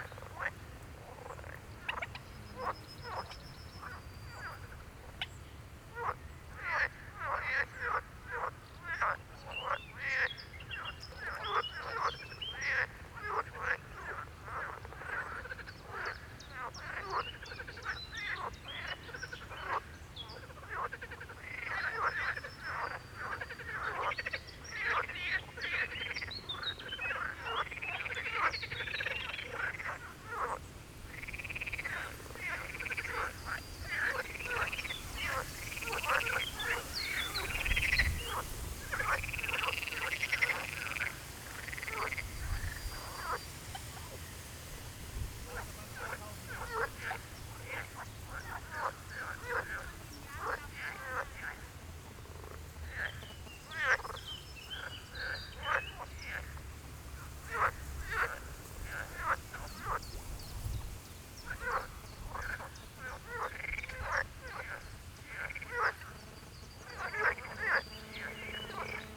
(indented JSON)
{
  "title": "Odervorland Groß Neuendorf-Lebus, Deutschland - pond, frogs",
  "date": "2015-05-31 18:35:00",
  "description": "pond near village Groß-Neuendorf, early evening frog concert\n(Sony PCM D50, DPA4060)",
  "latitude": "52.71",
  "longitude": "14.41",
  "altitude": "9",
  "timezone": "Europe/Berlin"
}